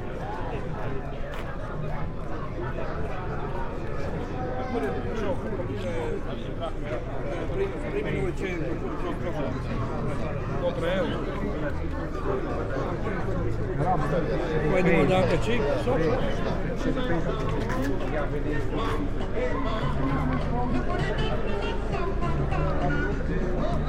market, Oprtalj, Croatia - small talks
sunday, antique market, slowly walking around, voices - small talks, bargaining, car, vinyl plate
9 September 2012, 12:13